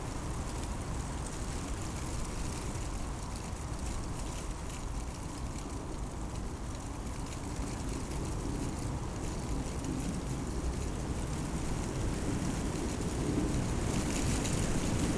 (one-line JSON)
{"title": "Tempelhofer Feld, Berlin - oak tree, wind, leaves", "date": "2015-01-03 15:15:00", "description": "Berlin, Tempelhof, old airfield area, location of the little oak tree revisited. cold and strong wind, rattling leaves\n(Sony PCM D50, DPA4060)", "latitude": "52.48", "longitude": "13.40", "altitude": "44", "timezone": "Europe/Berlin"}